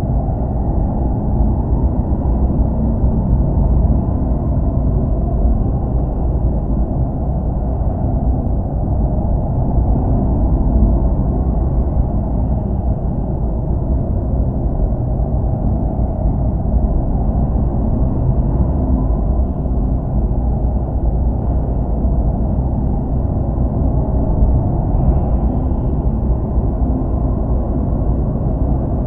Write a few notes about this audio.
Inside kartodrom. My kid riding karting. LOM geophone placed on windowsill inside the kartodrom.